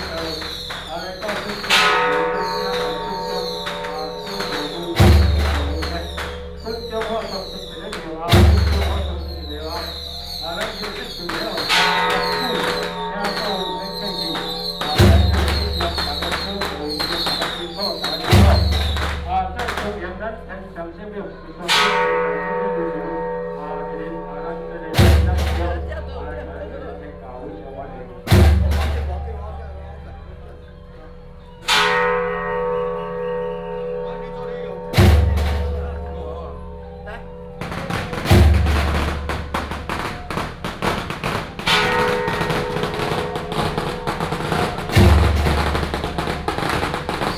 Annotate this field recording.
Firecrackers and fireworks, Many people gathered In the temple, Matsu Pilgrimage Procession